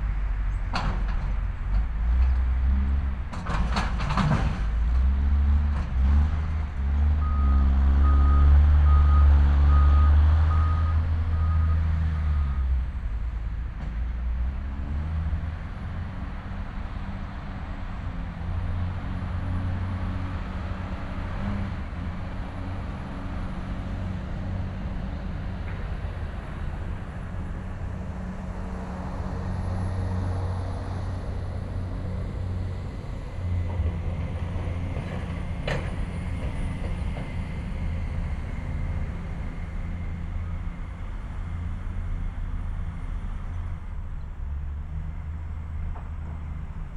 container terminal, Ljubljana - loading, vehicle at work
a specialized vehicle is moving containers from a train to a vans
(Sony PCM D50, DPA4060)